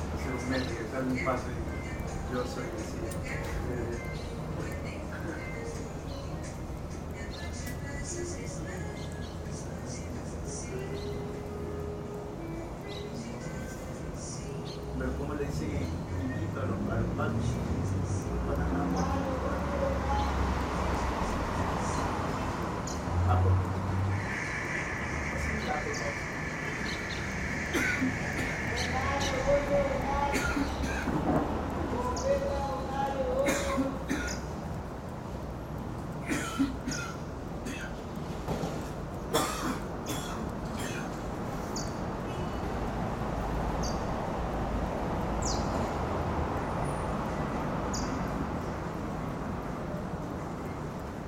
Ciudadela Bellavista, Guayaquil, Ecuador - From JML house
While waiting for lunch I decided to point the mics to the outdoor while me and friends talked about stuff. TASCAM DR100